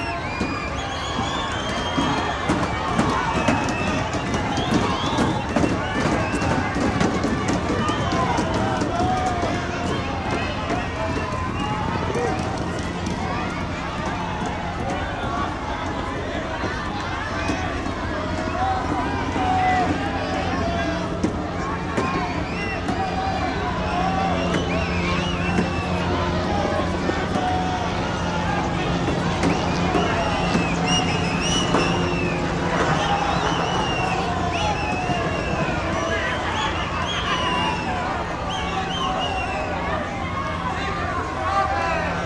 Confrontation with the police in Mexico City this 13th of september, after a mass eviction of the teachers who where in the Zocalo (main place of Mexico City).
Sound recorded in front of the 'BELLAS ARTES' monument.
Recorded by a binaural setup: 2 x SANKEN COS11D and an andy recorder Olympus.
13 September 2013, 17:00